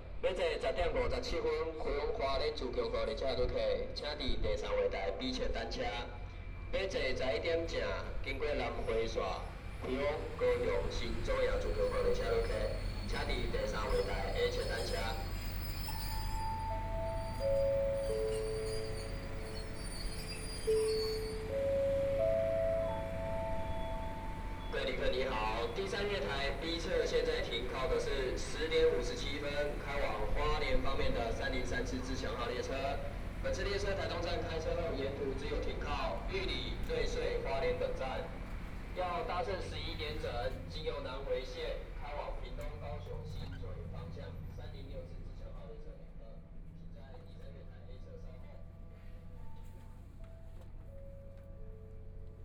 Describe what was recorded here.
Messages broadcast station, Station platform, Trains arrive, Binaural recordings, Zoom H4n+ Soundman OKM II